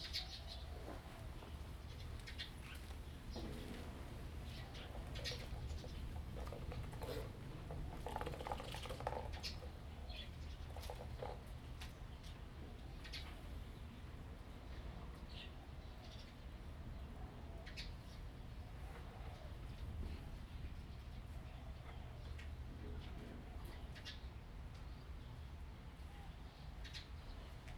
呂厝拱鋒宮, Jinsha Township - In front of the temple
Birds singing, In front of the temple
Zoom H2n MS+XY